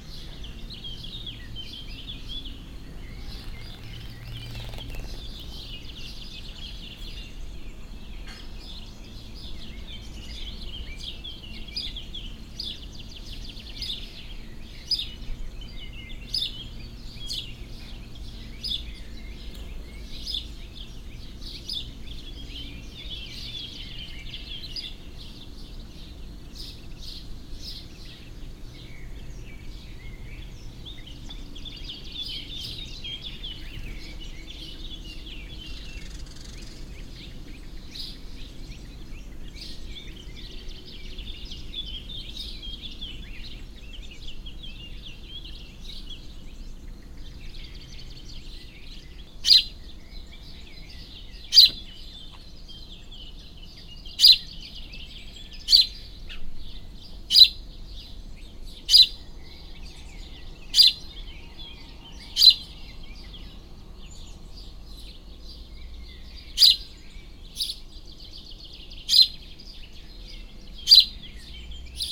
Vions, France - An hour in Vions village with sparrows, during a long summer evening
We are in a small village of Savoy, France. A bucolic garden focus everything beautiful and pleasant you can think about evoking a warm summer evening. After a tiring very hot day, crushed by weariness, come with us, rest on the terrace under the linden tree. Gradually a delicate freshness returns. You will be cradled by the sparrows, and progressively arrives the summer months nightlife : frogs and locusts.
Au sein de ce petit village, un écart bucolique comporte tout ce qu'il peut exister de beau et d'agréable en une belle soirée chaude d'été. Après une journée harassante de chaleur et écrasé par la fatigue, venez vous reposer sur la terrasse, sous le tilleul, avec peu à peu une sensible fraicheur qui revient. Vous serez bercés par les piaillements des moineaux, qui graduellement s'éteignent en vue de laisser la place à la vie nocturne des mois estivaux : les grenouilles et les criquets.
June 2017